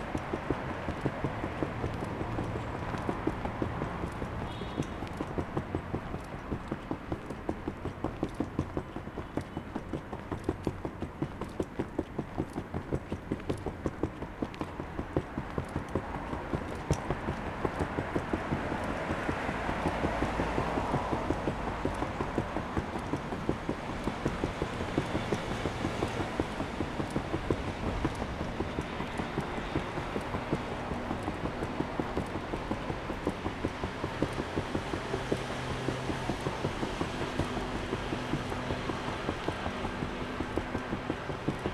World Games Blvd. - Hand luggage
Hand luggage, Street at night, Sony ECM-MS907, Sony Hi-MD MZ-RH1